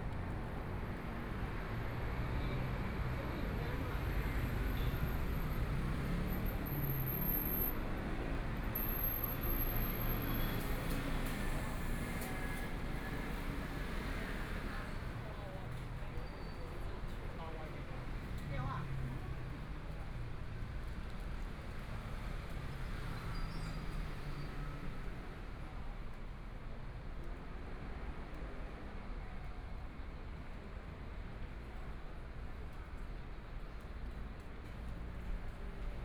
walking on the Road, Pedestrian, Traffic Sound, Motorcycle sound, Walking in the direction of the south
Binaural recordings, ( Proposal to turn up the volume )
Zoom H4n+ Soundman OKM II